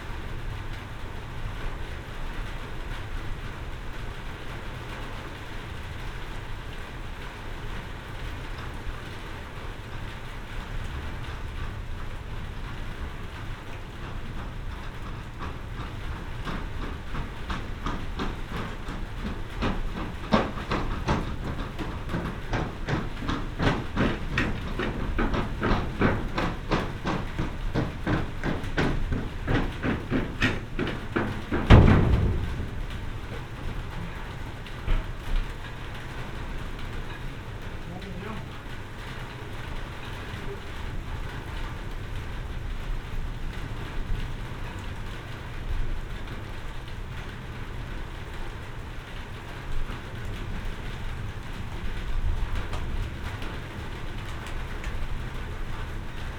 workum, het zool: in front of marina building - the city, the country & me: marina building, under tin roof
rain hitting a tin roof, approaching thunderstorm, kids running over berth
the city, the country & me: august 1, 2012
99 facets of rain
Workum, The Netherlands, 1 August 2012, ~21:00